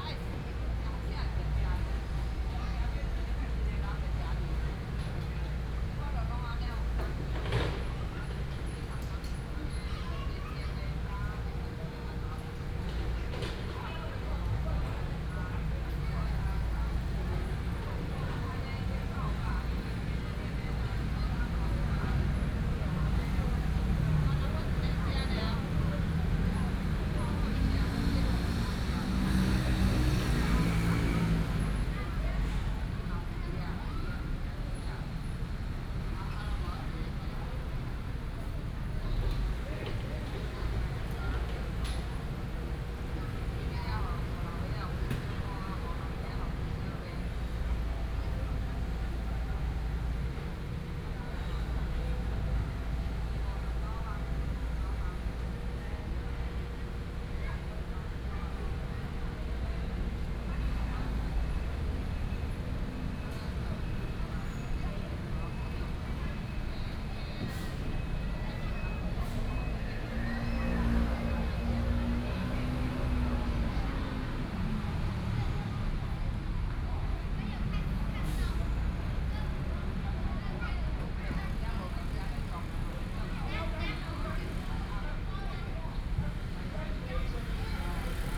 in the Park, Traffic Sound, Kids play area, Next to the school is under construction